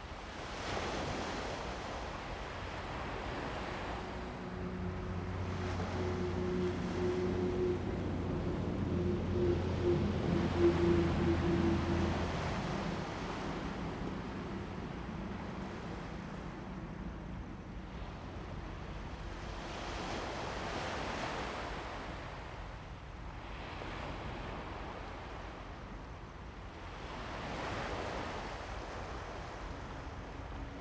上楓港, 縱貫公路 Fangshan Township - Early morning at the seaside
On the coast, Sound of the waves, Traffic sound, Early morning at the seaside
Zoom H2n MS+XY